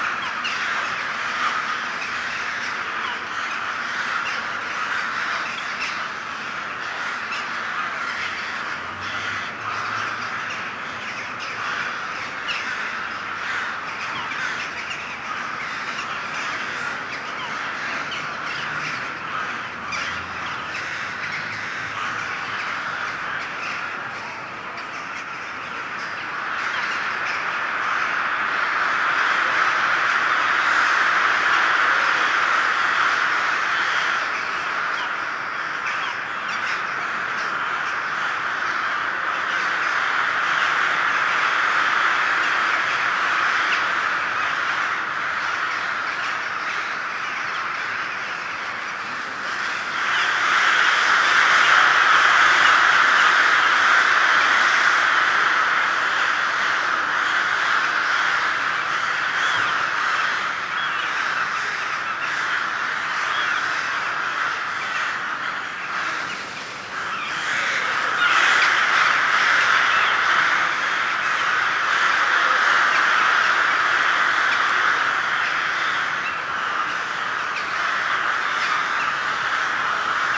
The crows awaken in the Cismigiu Gardens.
Recorded using a Tascam DR 22WL.
București, Romania, August 2016